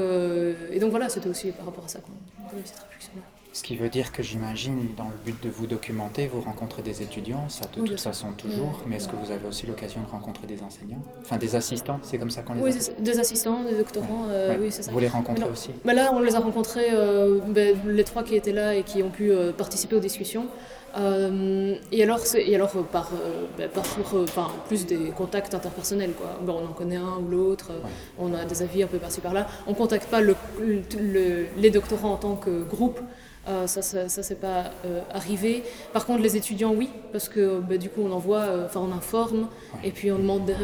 Centre, Ottignies-Louvain-la-Neuve, Belgique - Social elections
Hélène Jané-Aluja is the main representative of a social list called Cactus Awakens. This list defends students rights nearby the rector. Hélène describes in great details the list belief, and her personal involvement. Interview was made in a vast auditoire with reverb, it wasn't easy ! As she explains, there's no place to talk without a beer and a free-access social local would be useful.
Ottignies-Louvain-la-Neuve, Belgium, 24 March 2016, ~14:00